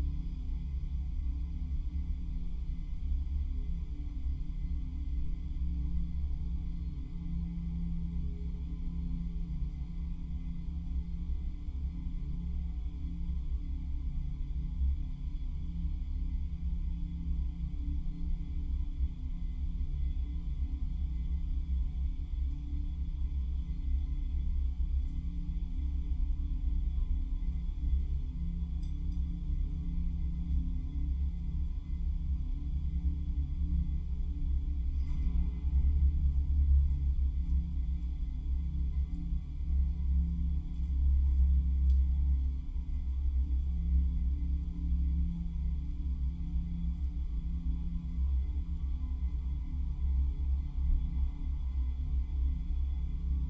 gatepost resonance, telliskivi
resonance inside a hollow steel gatepost in an isolated yark in telliskivi, tallinn